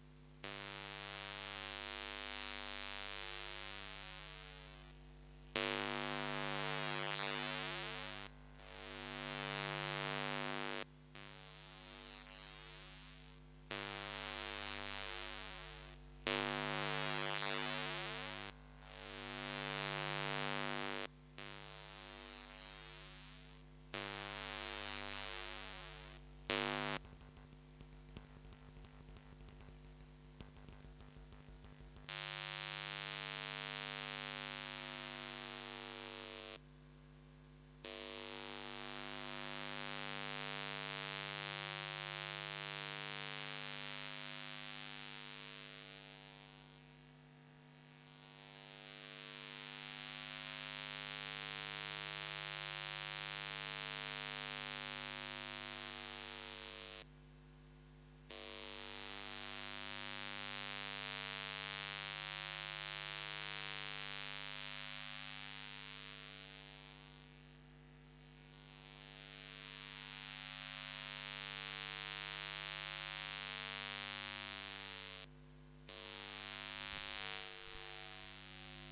Pierres, France - Garland light
Electromagnetic field song of a garland light. A classical object becomes so strange with this kind of microphone !